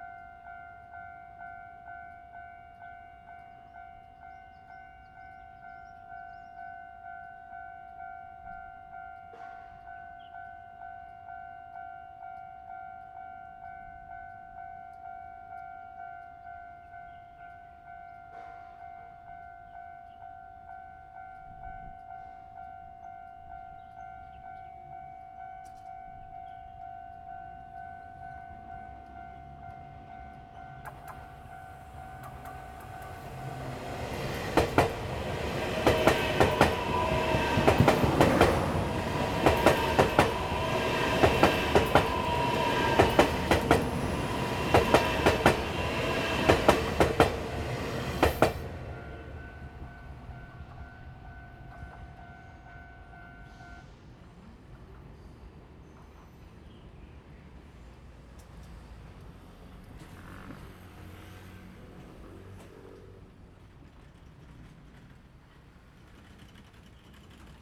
{
  "title": "Zhongshan Rd., Yuanli Township 苗栗縣 - Next to the tracks",
  "date": "2017-03-24 12:26:00",
  "description": "Traffic sound, The train runs through, Next to the tracks\nZoom H2n MS+XY +Spatial audio",
  "latitude": "24.45",
  "longitude": "120.65",
  "altitude": "22",
  "timezone": "Asia/Taipei"
}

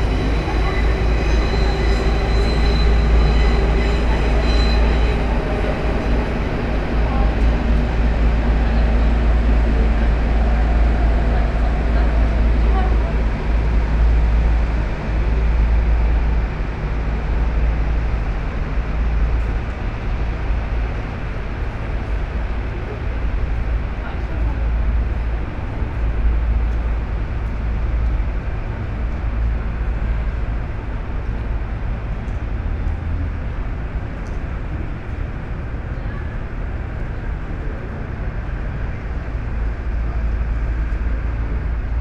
{
  "title": "head of an island, arcades, Mitte, Berlin, Germany - standing still",
  "date": "2015-09-04 16:14:00",
  "description": "rivers Spree ships and S-bahn trains\nSonopoetic paths Berlin",
  "latitude": "52.52",
  "longitude": "13.40",
  "altitude": "32",
  "timezone": "Europe/Berlin"
}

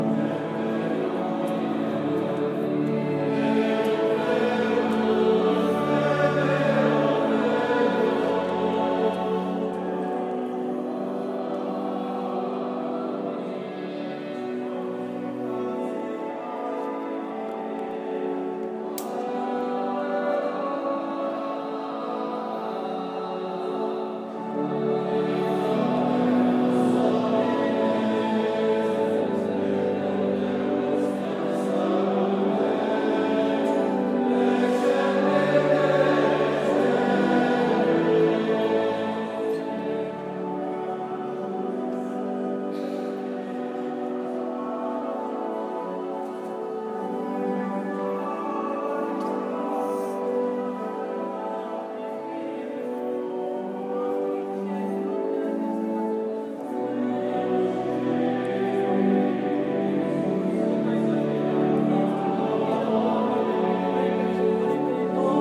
{
  "title": "Jerusalem, Holy Sepulcher - Morning Mass (Mess)",
  "date": "2013-10-23 08:51:00",
  "description": "I entered into the Holy Sepulcher of Jesus Christ early in the morning without any tourists. Two christian denominations were serving a mess-franciskans and armenians-the result a bit of two level serving of G*d.",
  "latitude": "31.78",
  "longitude": "35.23",
  "altitude": "767",
  "timezone": "Asia/Hebron"
}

